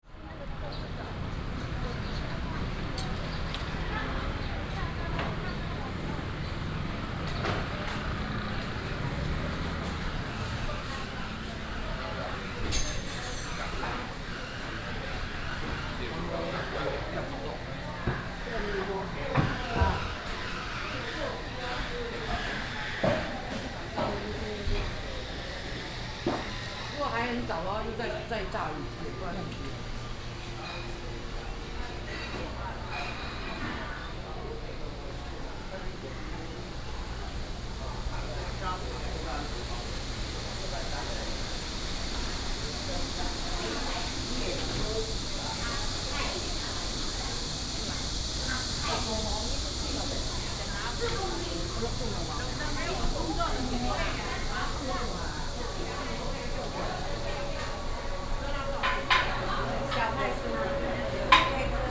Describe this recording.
Walking in the market, traffic sound, Cicada cry